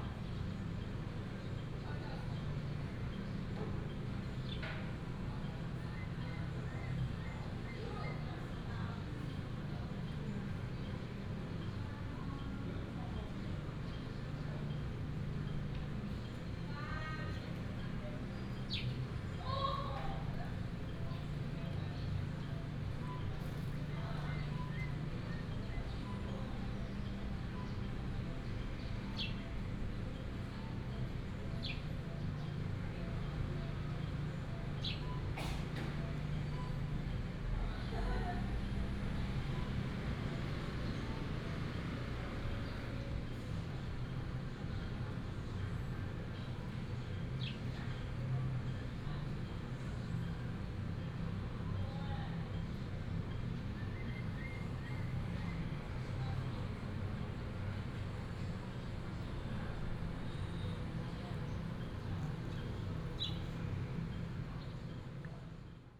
獅潭鄉文化會館, Miaoli County - small Town
small Town, The sound of birds, traffic sound, Binaural recordings, Sony PCM D100+ Soundman OKM II